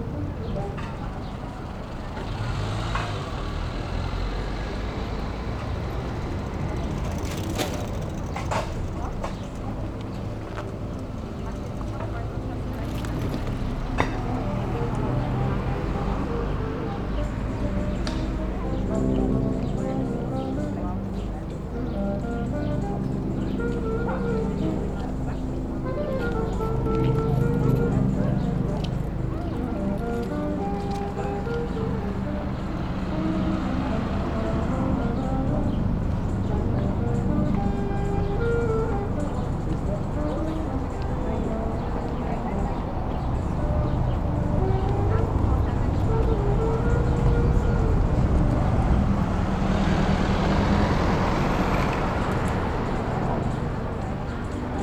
Berlin: Vermessungspunkt Friedelstraße / Maybachufer - Klangvermessung Kreuzkölln ::: 18.07.2011 ::: 18:19